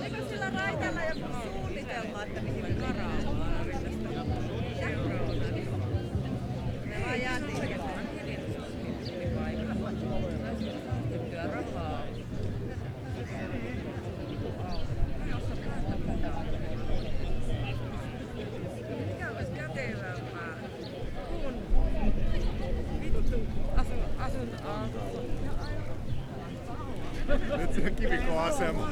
The island 'Kiikeli' is really popular among younger folk during warm summer evenings. This time the island was full of young people spending time with their friends. Zoom H5, default X/Y module.